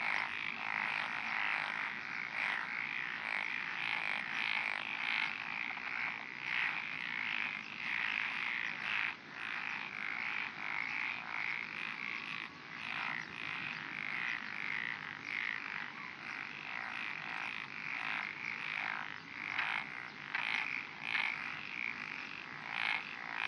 Title: 202006221842 Frogs in a Rice Paddy by a Road
Date: 202006221842
Recorder: Sound Devices MixPre-6 mk1
Microphone: Davinci Head mk2
Technique: Binaural Stereo
Location: Sakamoto, Shiga, Japan
GPS: 35.075152, 135.871114
Content: binaural, head, hrtf, frog, road, wind, rice, field, paddy, japan, old man, children, cars, traffic, ambiance, 2020, summer, sakakmoto, shiga, kansai
滋賀県, 日本 (Japan)